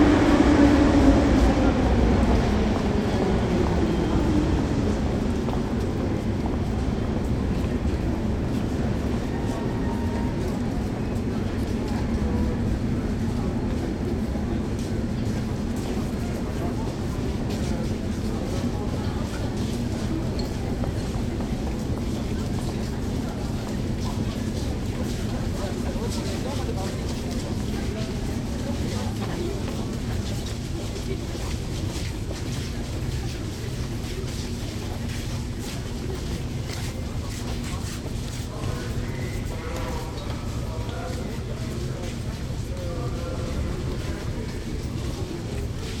I was sitting at the station, and waited for the meeting.
I'm a little late, and the meeting could not be, so I decided to entertain myself this record on the recorder Zoom H2.
24 December 2014, 19:00